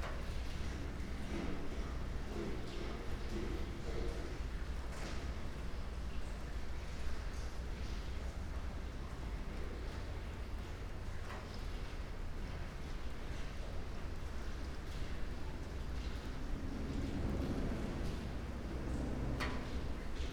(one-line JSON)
{"title": "Muzej norosti, Museum des Wahnsinns, courtyard, Trate, Slovenia - light rain", "date": "2015-06-20 15:13:00", "latitude": "46.71", "longitude": "15.79", "altitude": "285", "timezone": "Europe/Ljubljana"}